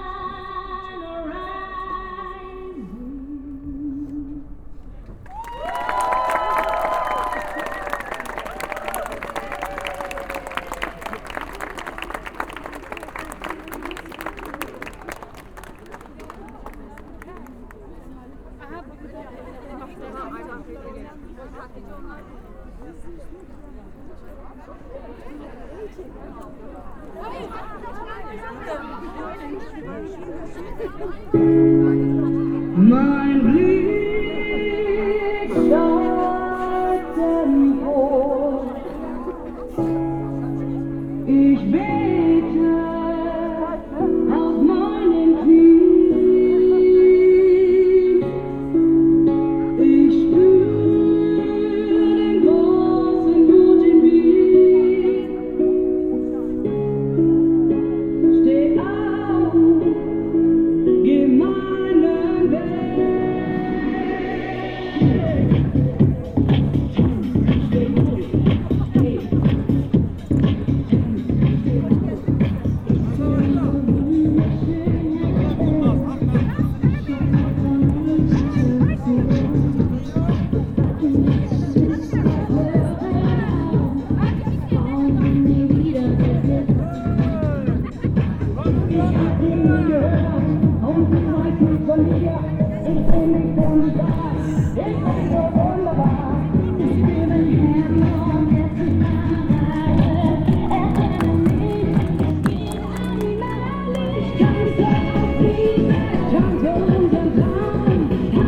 Katharinenstraße, Dortmund, Germany - onebillionrising, joining the dance...
...joining the dance… mics in my ears… good to see that quite a few men are joining the dance...
global awareness of violence against women